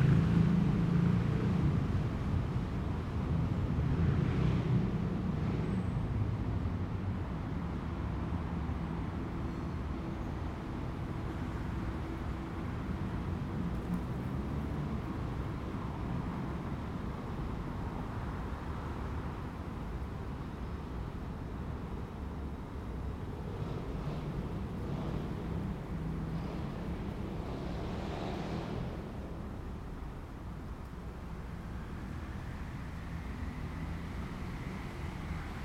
{
  "title": "Botanique, Rue Royale, Saint-Josse-ten-Noode, Belgium - Trains passing beside the Botanique",
  "date": "2013-06-19 17:58:00",
  "description": "The sound of the trains passing closely by, standing under the leaves in the Botanique.",
  "latitude": "50.86",
  "longitude": "4.36",
  "altitude": "25",
  "timezone": "Europe/Brussels"
}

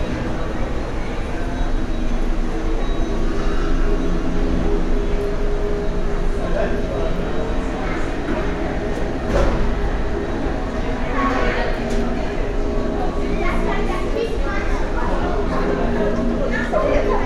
{
  "title": "Centre Pompidou, Paris - Centre Pompidou, Paris. Escalator",
  "date": "2011-07-25 20:16:00",
  "description": "Descending 6 floors of the outside escalator of the Centre Pompidou, Paris.",
  "latitude": "48.86",
  "longitude": "2.35",
  "altitude": "58",
  "timezone": "Europe/Paris"
}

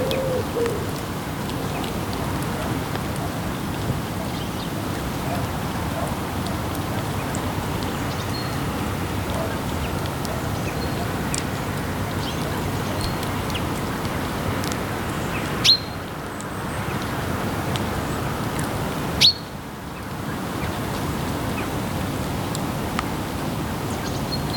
{
  "title": "Chemin du Signal, Bernex, Suisse - Signal Bernex",
  "date": "2020-12-20 09:15:00",
  "description": "Au sommet du Signal de Bernex par temps de brouillard. On entend les oiseux, les cloches de l'église, l'autoroute A1 au loin. Il pleut un peu et le gouttes tombent sur l'enregistreur\nrecorder Zoom H2n",
  "latitude": "46.17",
  "longitude": "6.07",
  "altitude": "496",
  "timezone": "Europe/Zurich"
}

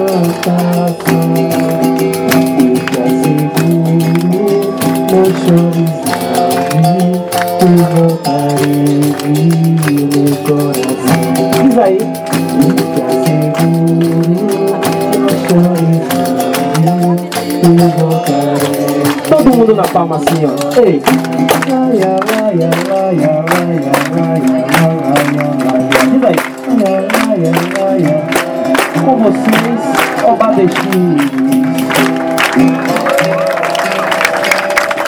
{
  "title": "Brazil, Bahia, Salvador - Artistas de Rua - Berimbaus Afinados",
  "date": "2014-03-16 19:51:00",
  "description": "Caminhando pela orla da praia da Barra em Salvador, me deparo com vários artistas de rua tocando, cantando, brincando, atuando, recitando poesia. Esse áudio é de uma orquestra de berimbaus afinados chamado Oba DX.\nGravado com um simples gravador de mão Sony ICD PX312",
  "latitude": "-13.01",
  "longitude": "-38.53",
  "altitude": "8",
  "timezone": "America/Bahia"
}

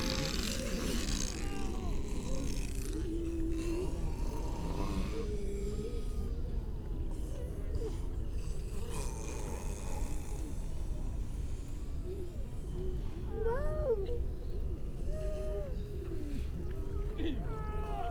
{
  "title": "Unnamed Road, Louth, UK - grey seals soundscape ...",
  "date": "2019-12-03 11:43:00",
  "description": "grey seal soundscape ... mainly females and pups ... parabolic ... bird calls from ... skylark ... wagtail ... redshank ... linnet ... pied wagtail ... curlew ... starling ... all sorts of background noise ... and a human baby ...",
  "latitude": "53.48",
  "longitude": "0.15",
  "altitude": "1",
  "timezone": "Europe/London"
}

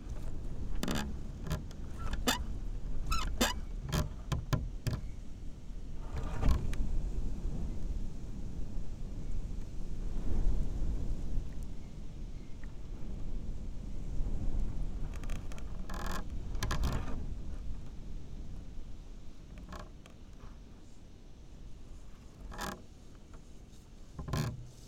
{
  "title": "Utena, Lithuania, huts door",
  "date": "2019-09-18 17:15:00",
  "description": "there's some wooden hut at abandoned watertower. old, inclined with doors swaying in the wind...",
  "latitude": "55.54",
  "longitude": "25.60",
  "altitude": "130",
  "timezone": "GMT+1"
}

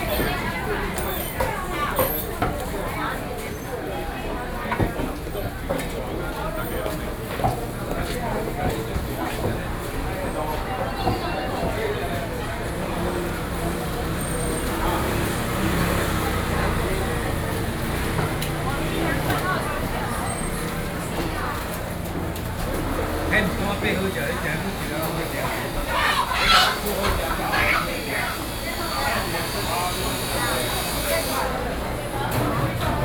{
  "title": "Zhongyang Rd., Luzhou Dist., New Taipei City - Walking in the traditional market",
  "date": "2012-11-04 10:56:00",
  "description": "Walking in the traditional market\nRode NT4+Zoom H4n",
  "latitude": "25.08",
  "longitude": "121.47",
  "altitude": "7",
  "timezone": "Asia/Taipei"
}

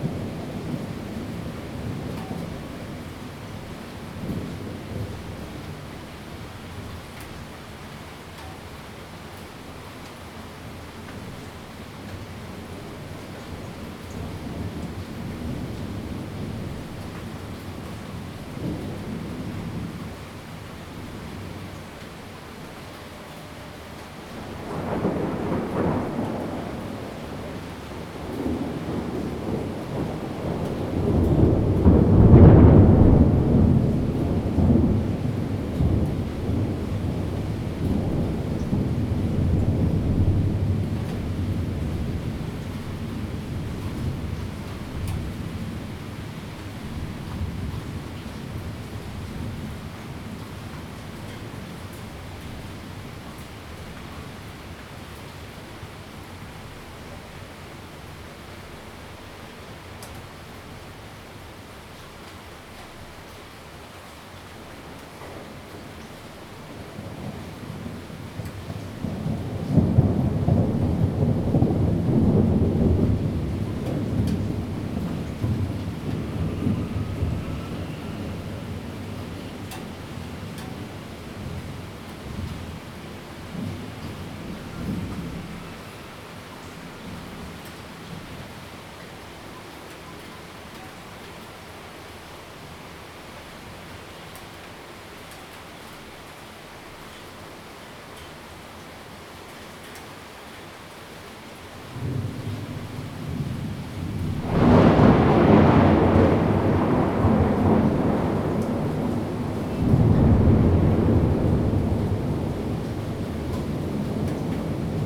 {
  "title": "Rende 2nd Rd., Bade Dist. - thunder",
  "date": "2017-07-07 16:46:00",
  "description": "Thunderstorms\nZoom H2n MS+XY+ Spatial audio",
  "latitude": "24.94",
  "longitude": "121.29",
  "altitude": "141",
  "timezone": "Asia/Taipei"
}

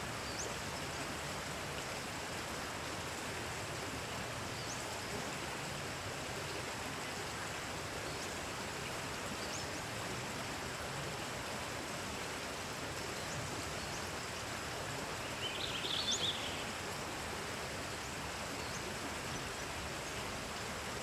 {
  "title": "Miur Wood hikers, California",
  "description": "morning hikers in Miur Wood valley",
  "latitude": "37.90",
  "longitude": "-122.58",
  "altitude": "47",
  "timezone": "Europe/Tallinn"
}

